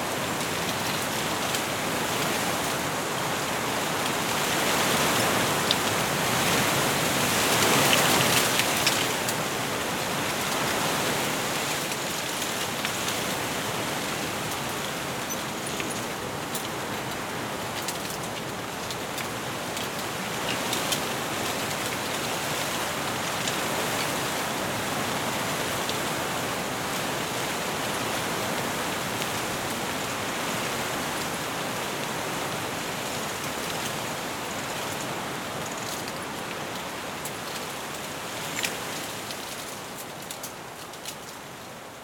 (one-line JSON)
{"title": "Digulleville, France - Wind in bamboos", "date": "2015-12-08 14:21:00", "description": "Wind in bamboos, Zoom H6", "latitude": "49.71", "longitude": "-1.86", "altitude": "21", "timezone": "Europe/Paris"}